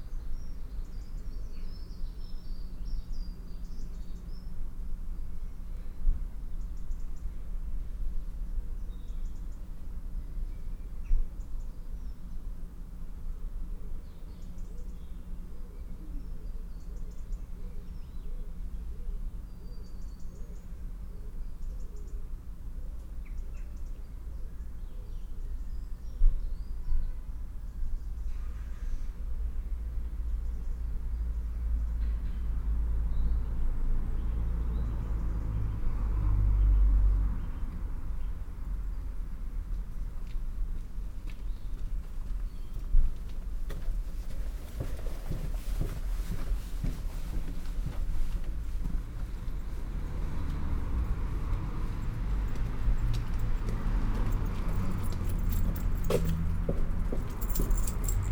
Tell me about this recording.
I was investigating my commute to work and realised that parking at Barton and walking in to Brookes through the quiet backstreets and alleyways was necessary for the clarity and calm I like to bring to my teaching. This is the sound of turning into Cuckoo Lane, a lovely narrow passageway, used by cyclists and pedestrians and with walls high enough to block many traffic sounds. Accidental wildernesses at the end of people's gardens, abutting the alley way, provide residencies for birds of all kinds. All suburban kinds, anyways.